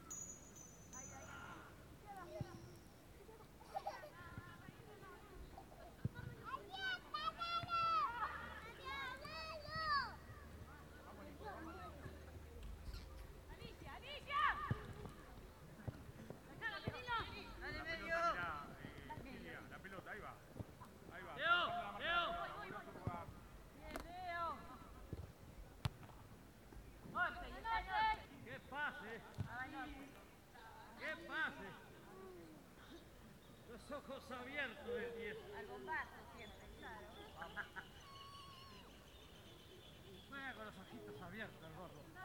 Pernambuco, Montevideo, Departamento de Montevideo, Uruguay - Montevideo - Uruguay - Stade du Cerro
Montevideo - Uruguay
Stade du quartier du Cerro
Entrainement de football - Ambiance
18 October, 15:00